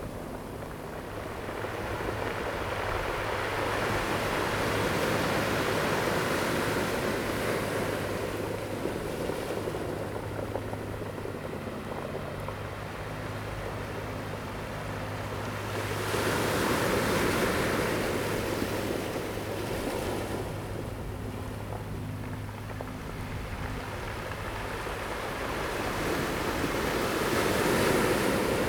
Hualien City, Taiwan - On the beach
Waves sound, On the beach
Zoom H2n MS+XY +Spatial Audio